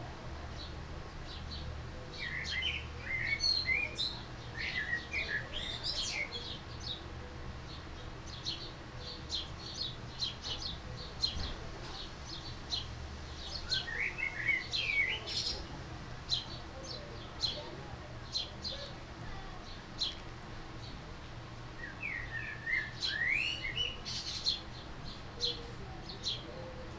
Haaksbergen, Nederland - In the backyard 2
Birds, wind and neighbours chatting in the backyard of my parents house.
Zoom H2 recorder with SP-TFB-2 binaural microphones.